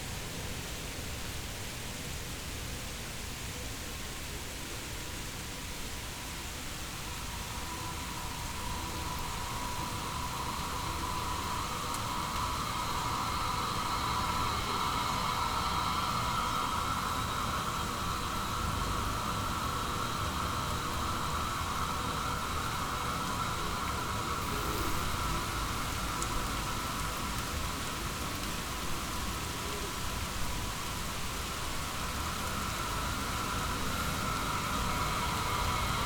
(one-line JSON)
{"title": "Hradní, Ostrava-Slezská Ostrava, Czechia - Proluky - Cesta tam a zase zpátky", "date": "2022-04-11 13:04:00", "description": "zvuková krajina z okolí hradu", "latitude": "49.83", "longitude": "18.30", "altitude": "214", "timezone": "Europe/Prague"}